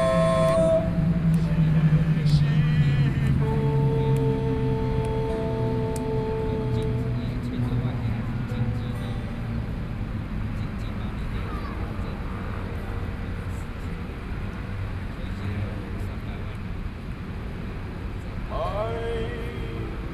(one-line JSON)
{"title": "National Chiang Kai-shek Memorial Hall, Taipei - antinuclear", "date": "2013-09-06 20:32:00", "description": "Taiwanese aborigines are published antinuclear ideas, Taiwanese Aboriginal singers in music to oppose nuclear power plant, Aboriginal songs, Sony PCM D50 + Soundman OKM II", "latitude": "25.04", "longitude": "121.52", "altitude": "8", "timezone": "Asia/Taipei"}